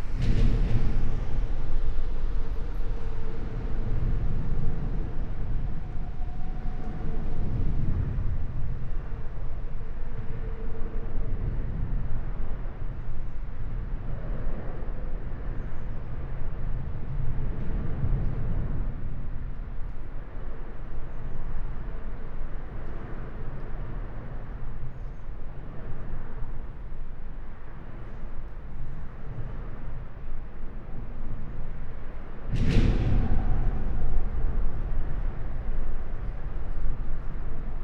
deep drones below the highway bridge (Rodenkirchener Autobahnbrücke)
(Sony PCM D50, Primo EM172)